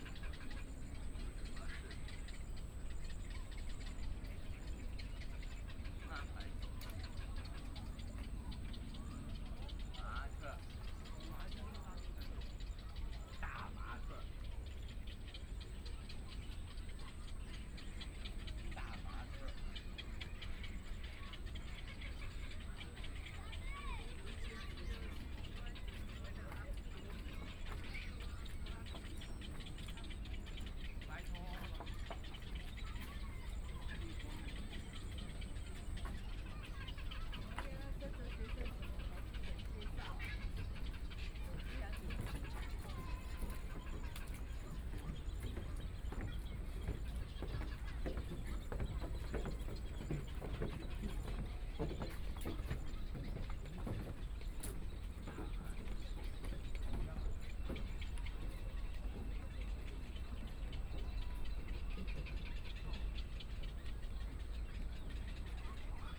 羅東林業文化園區, Luodong Township - birdsong
in the Park, Tourist, The sound of birdsong, Trains traveling through
28 July 2014, ~11:00